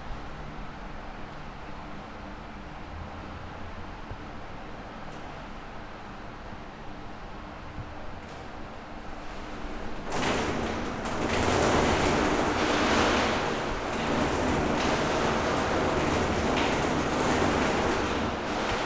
Poznan, Mateckigo street, stair case - dynamic rain
the noise is the sound of a very heavy rain outside. every once in a while the downpour smashes on the lid leading to the roof and on a plastic window. Someone in the storage unit is having a conversation through their phone's loudspeaker. the elevator goes down - its machinery is just on the other side of the wall. inhabitants walking on the floor.